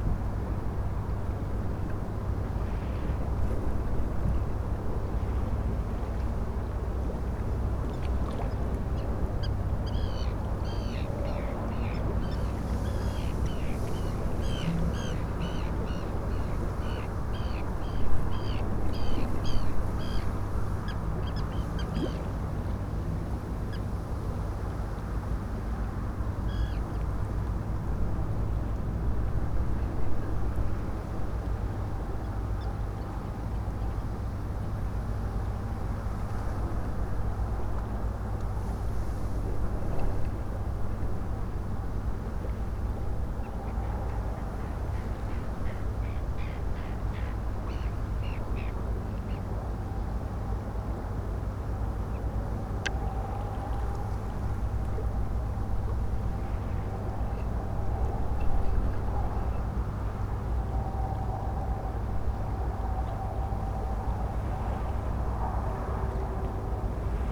houtribdijk: trintelhaven - the city, the country & me: trintelhaven, at the shore of the dike
seagulls, traffic noise
the city, the country & me: july 6, 2011